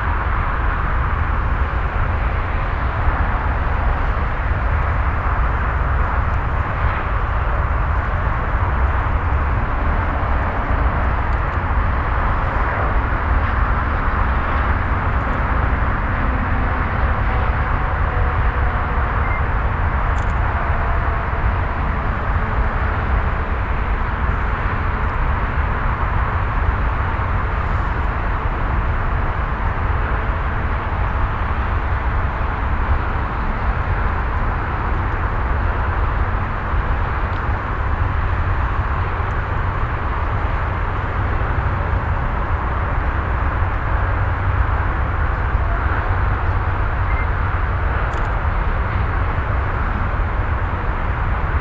Weetfeld, Hamm, Germany - Zur Gruenen Aue 2
shortly before reaching the motorway bridge, I pause at what looks like some building site into the wetlands..., take some photographs…
kurz vor der Autobahnbrücke, eine Art Baustelle in das Feuchtgebiet hinein…, ich verweile, mache ein paar Aufnahmen…
Before due to meet some representatives of an environmental activist organization in Weetfeld, I’m out exploring the terrain, listening, taking some pictures…
Ein paar Tage vor einem Treffen mit Vertretern der “Bürgergemeinschaft gegen die Zerstörung der Weetfelder Landschaft”, fahre ich raus, erkunde etwas das Terrain, höre zu, mache ein paar Fotos…
“Citisen Association Against the Destruction of the Environment”
(Bürgergemeinschaft gegen die Zerstörung der Weetfelder Landschaft)